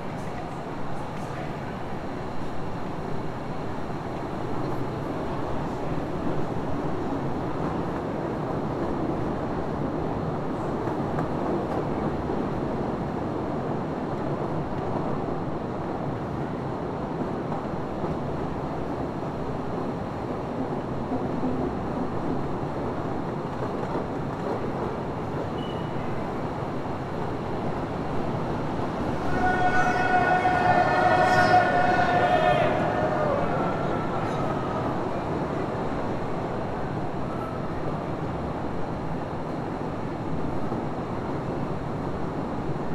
Postojna, Slovenien - Train inside Postojna cave
This beutiful train ride is like an Indiana jones adventure, that is nice to listen to, and truely beautiful to expirience. I Daniel was siting in front with my tascam recorder.
Postojna, Slovenia, 7 September 2014, ~14:00